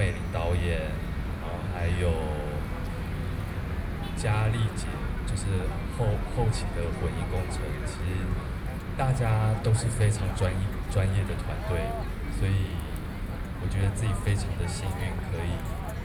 Zhongshan S. Rd., Taipei City - nuclear power
Idol actor, Opposed to nuclear power plant construction, Binaural recordings